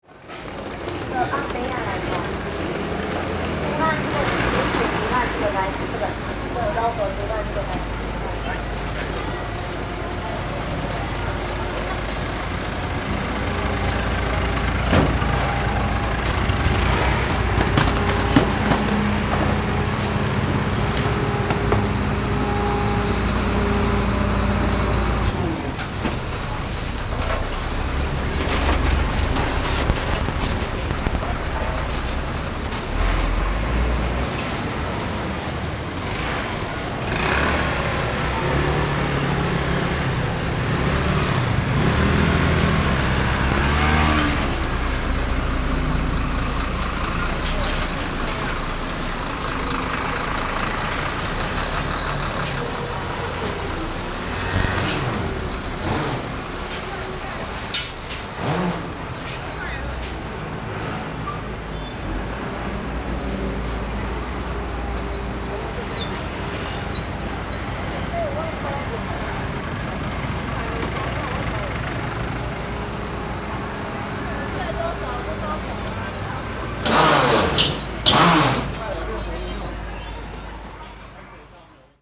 in the traditional market, Traffic Sound, garbage truck on arrival
Zoom H4n
New Taipei City, Taiwan, 18 January